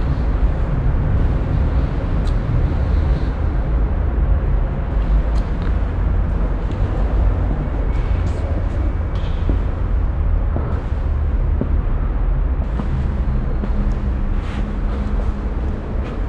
{"title": "Central Area, Cluj-Napoca, Rumänien - Cluj, catholic church", "date": "2012-11-15 12:10:00", "description": "Inside the catholic church. The sound of reverbing traffic inside the wide and high open reflective hall. Silent steps and whispers of the visitors. At the end also sound of an outdoor construction.\ninternational city scapes - topographic field recordings and social ambiences", "latitude": "46.77", "longitude": "23.59", "altitude": "348", "timezone": "Europe/Bucharest"}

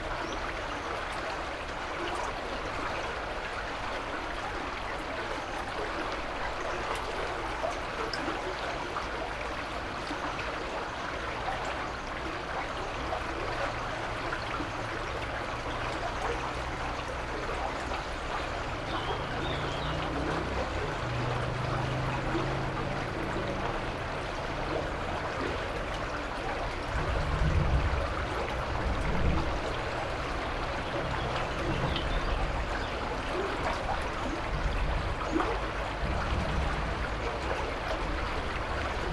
{"title": "Rue Léon Metz, Esch-sur-Alzette, Luxemburg - river Alzette under bridge", "date": "2022-05-10 10:30:00", "description": "river Alzette flowing, heard under the brigde\n(Sony PCM D50)", "latitude": "49.50", "longitude": "5.99", "altitude": "289", "timezone": "Europe/Luxembourg"}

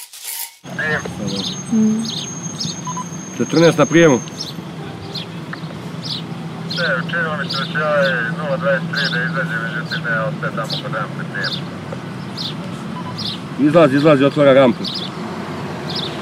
{"title": "Donji grad, Kalemegdan, Belgrade - Cuvar (Guardkeeper)", "date": "2011-06-15 15:25:00", "latitude": "44.83", "longitude": "20.45", "altitude": "75", "timezone": "Europe/Belgrade"}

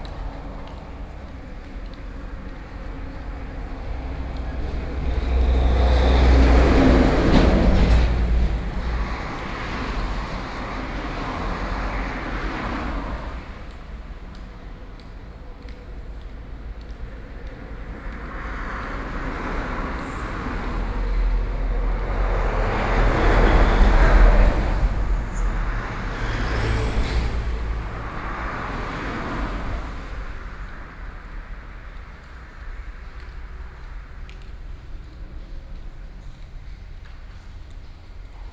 {"title": "Ruifang, New Taipei City - Ancient tunnel", "date": "2012-07-12 11:22:00", "latitude": "25.12", "longitude": "121.86", "altitude": "14", "timezone": "Asia/Taipei"}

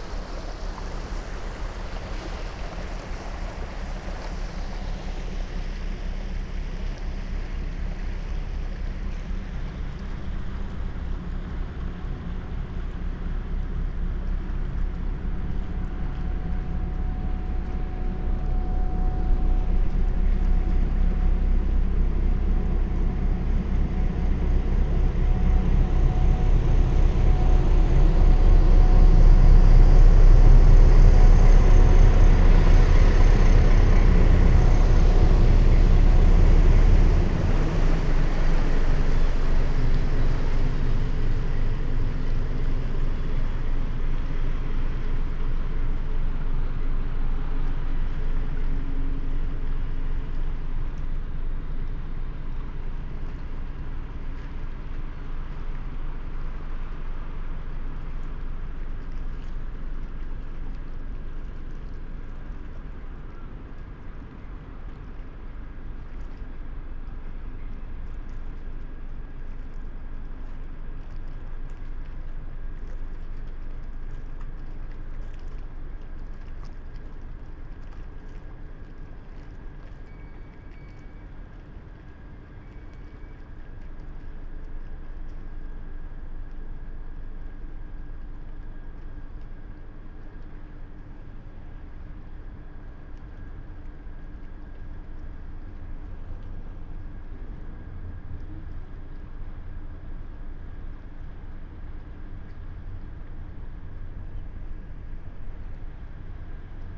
{"title": "Hunte, balance train bridge, Oldenburg, Deutschland - ships passing-by, train bridge closes", "date": "2016-02-27 15:25:00", "description": "train bridge over the river Hunte. The bridge has a special construction to open for ships to pass through (german: Rollklappbrücke). Sound of ships, a warn signal, bridge swinging back to it's normal position, cyclists and pedestrians crossing.\n(Sony PCM D50, Primo EM172)", "latitude": "53.14", "longitude": "8.23", "timezone": "Europe/Berlin"}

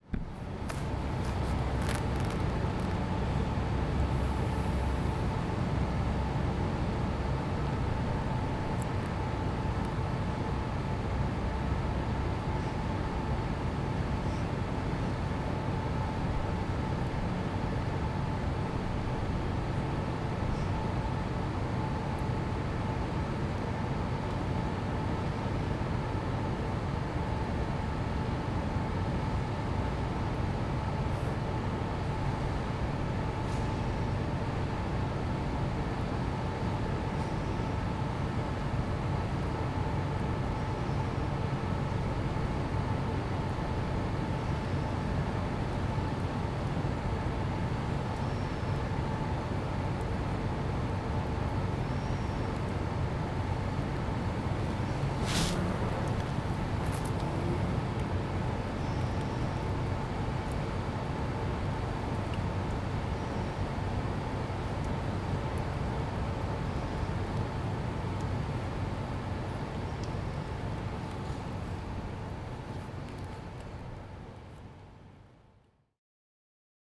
{"title": "Muhlenberg College Hillel, West Chew Street, Allentown, PA, USA - Muhlenberg College Freshman Quad", "date": "2014-12-03 10:00:00", "description": "Recorded in the freshman quad at Muhlenberg College.", "latitude": "40.60", "longitude": "-75.51", "altitude": "117", "timezone": "America/New_York"}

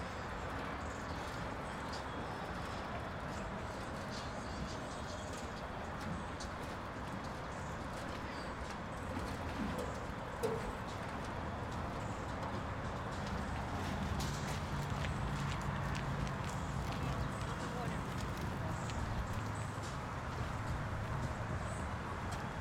{"title": "Fdr Drive Service Rd E, New York, NY, USA - East River Greenway", "date": "2022-02-17 09:50:00", "description": "Walking from Stuyvesant Cove to East River Greenway. Walking over grains of deicing salts.\nSounds of people enjoying the park with personal speakers.", "latitude": "40.73", "longitude": "-73.97", "altitude": "1", "timezone": "America/New_York"}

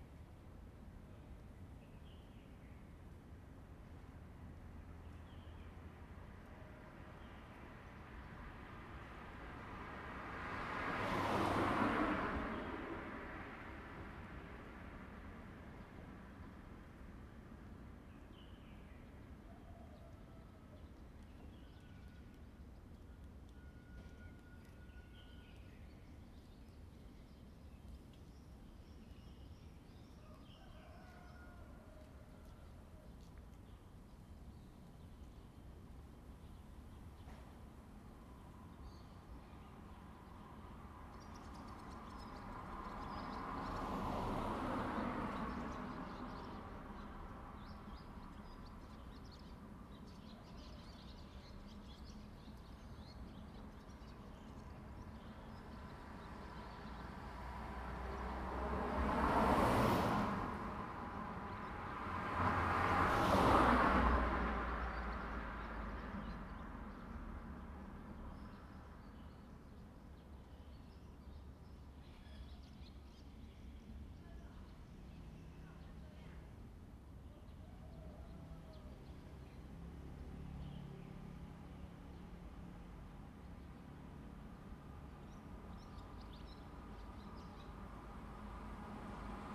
{"title": "Xiping, Yuanli Township 苑裡鎮 - Next to the railway", "date": "2017-03-24 12:45:00", "description": "Traffic sound, The train runs through, bird sound\nZoom H2n MS+XY +Spatial audio", "latitude": "24.45", "longitude": "120.65", "altitude": "20", "timezone": "Asia/Taipei"}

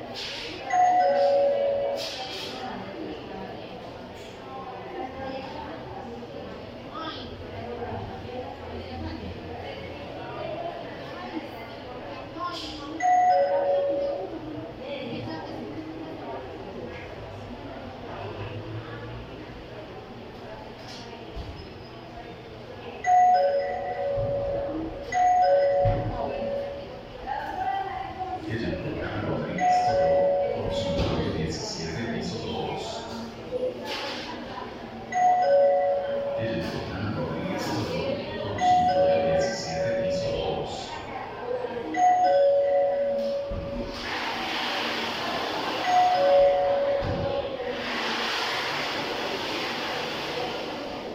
{"title": "Cl., Medellín, Antioquia, Colombia - Hospital", "date": "2021-11-12 15:40:00", "description": "Información Geoespacial\n(latitud: 6.261213, longitud: -75.564943)\nIPS Universitaria\nDescripción\nSonido Tónico: gente hablando y bulla en general\nSeñal Sonora: pitido indicador\nMicrófono dinámico (celular)\nAltura: 6,23 cm\nDuración: 3:00\nLuis Miguel Henao\nDaniel Zuluaga", "latitude": "6.26", "longitude": "-75.56", "altitude": "1475", "timezone": "America/Bogota"}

